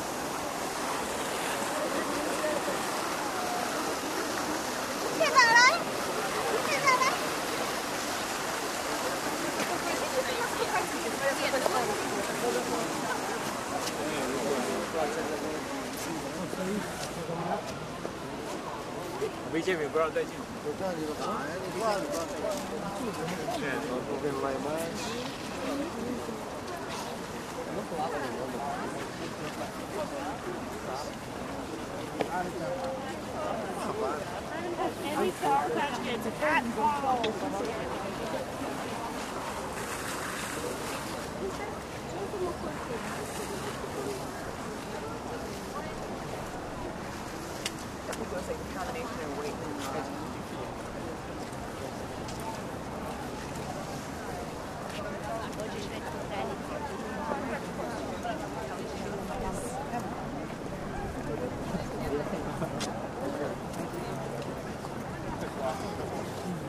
Paris, the Louvre, waiting queue
Passing the queue which lines up for the ticket counter for the museums of the Louvre, there appears a wide range of different languages amidst the waiting tongues. Language learning means, at first, listening. Lost efforts, if you try here, but a bewildering phonetic scene.